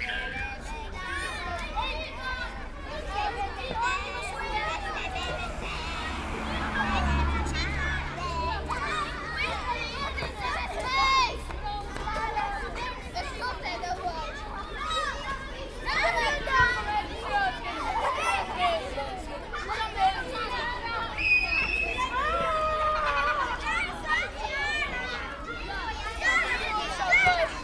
Streliška ulica, Ljubljana - kids on school yard
kids playing on school yard at Streliška ulica.
(Sony PCM-D50, DPA4060)
Ljubljana, Slovenia, 7 November 2012, ~15:00